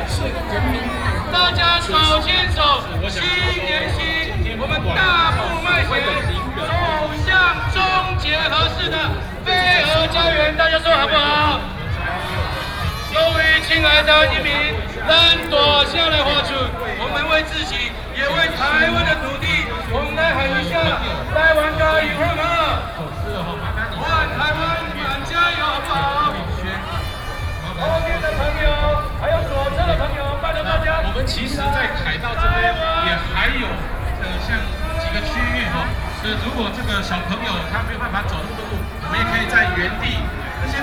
Taipei, Taiwan - Anti-nuclear movement
No NUKE, Binaural recordings
中正區 (Zhongzheng), 台北市 (Taipei City), 中華民國